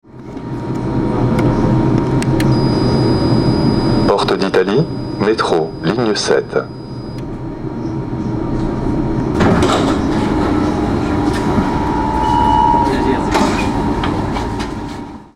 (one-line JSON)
{"title": "RadioFreeRobots T3 Porte d'Italie", "latitude": "48.82", "longitude": "2.36", "altitude": "64", "timezone": "GMT+1"}